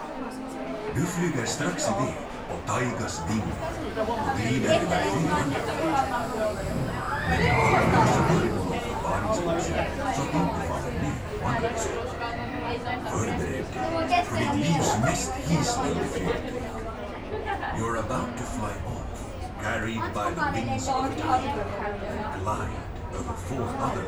Taiga, Linnanmäki, Helsinki, Finland - Queue for Taiga -roller coaster
Sounds from the queue of launched roller coaster 'Taiga' in Linnanmäki amusement park, Helsinki. Zoom H5, default X/Y module.